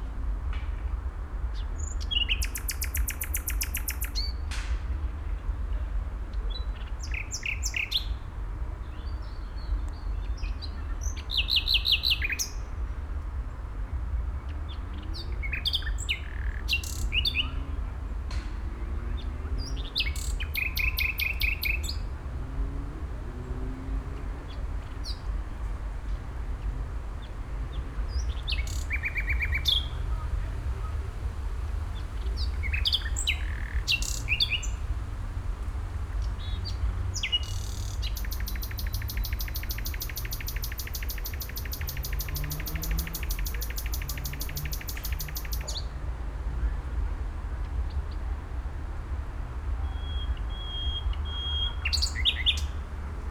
Hasenheide, Columbiadamm, Berlin - Nightingale, traffic and funfair noise
Hasenheide park, Berlin, this nightingale could not be disturbed by the approaching recordist... she continued singing further sounds of the near by funfair, distant traffic, etc.
(Sony PCM D50, DPA4060)
Berlin, Germany, 8 May 2019, 20:30